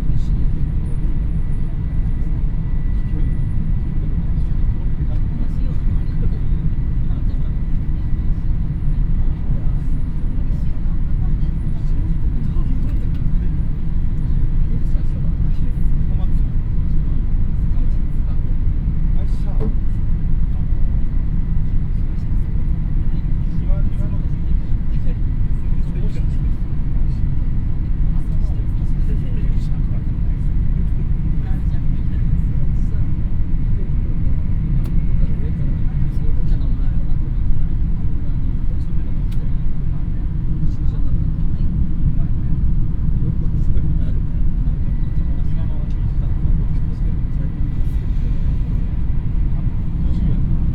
inside the shinkhansen train - coming from tokio - direction takasaki - recorded at and for the world listening day sunday the 18th of july2010
international city scapes - social ambiences and topographic field recordings